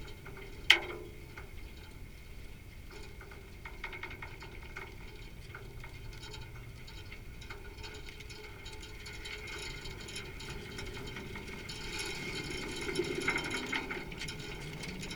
workum, het zool: marina, berth h - the city, the country & me: marina, sailing yacht, babystay
contact mic on babystay
the city, the country & me: july 8, 2011